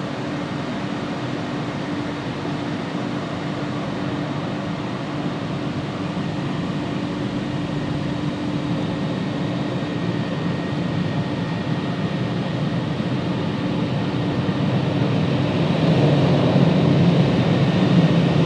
Charlottenburg, Gervinusstraße
carwash, autowäsche, petrolstation
Berlin, Germany, 2 March, 08:55